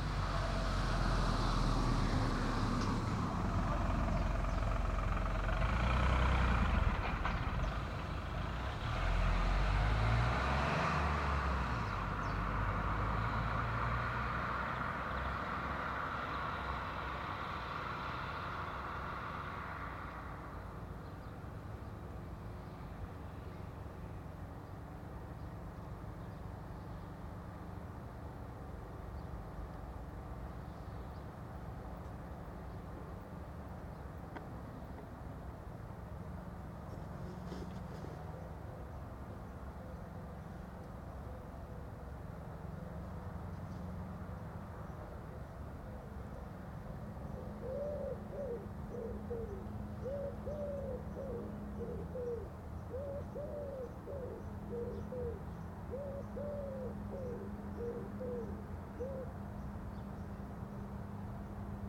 Rue de Bourbuel, Niévroz, France - Square ambience
Cars, a few birds, a cock.
Tech Note : Sony PCM-M10 internal microphones.